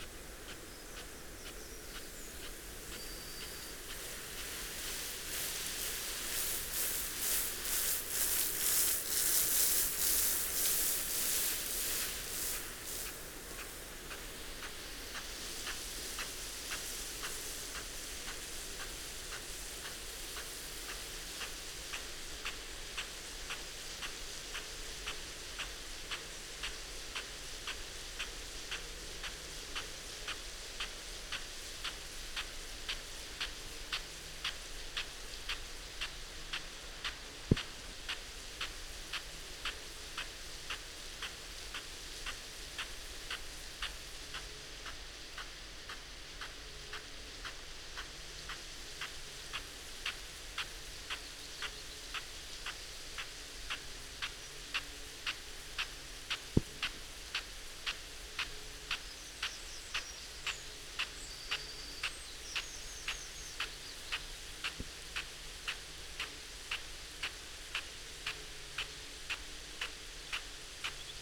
{"title": "Croome Dale Ln, Malton, UK - field irrigation system ...", "date": "2020-05-20 06:35:00", "description": "field irrigation system ... parabolic ... a Bauer SR 140 ultra sprinkler to a Bauer Rainstart E irrigation unit ... bless ...", "latitude": "54.11", "longitude": "-0.55", "altitude": "85", "timezone": "Europe/London"}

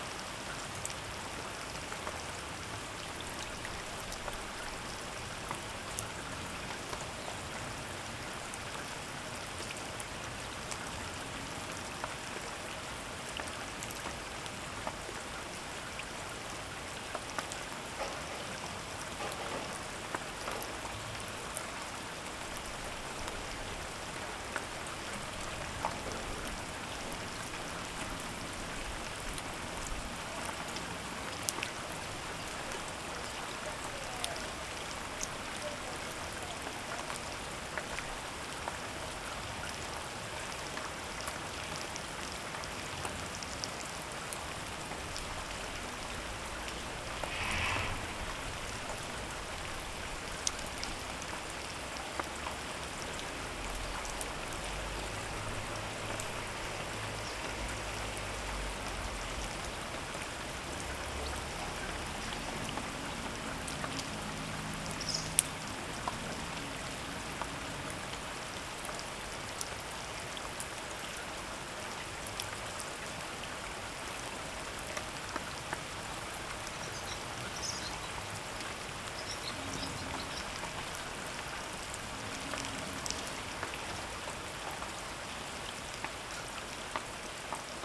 {"title": "Pothières, France - Rain", "date": "2017-07-31 14:00:00", "description": "In the small and almost abandoned Pothières village, rain is persistently falling. Waiting in a bus stop shanty, we are waiting the rain decreases.", "latitude": "47.92", "longitude": "4.52", "altitude": "199", "timezone": "Europe/Paris"}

